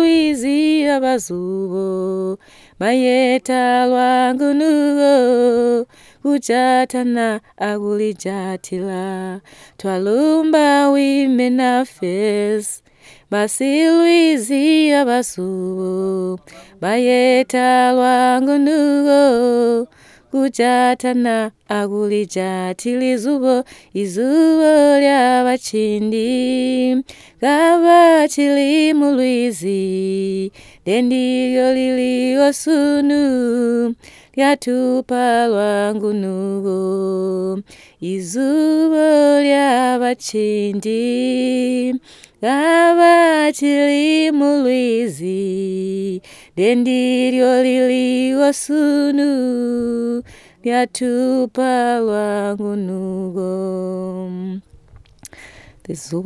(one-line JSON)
{"title": "Sikalenge, Binga, Zimbabwe - Zubo is bringing women together...", "date": "2016-07-26 21:09:00", "description": "Lucia Munenge recorded herself singing a song of the Sikalenge women, which tells the story, vision and achievements of “Zubo”, from the traditional fishing-baskets of the BaTonga women to the formation of Zubo Trust as an organisation whose vision is based on the same principle of women working together in teams to support themselves, their families and the community at large.. after the song, Lucia also adds a summary translation in English.\na recording by Lucia Munenge, Zubo's CBF at Sikalenge; from the radio project \"Women documenting women stories\" with Zubo Trust, a women’s organization in Binga Zimbabwe bringing women together for self-empowerment.", "latitude": "-17.68", "longitude": "27.46", "altitude": "575", "timezone": "Africa/Harare"}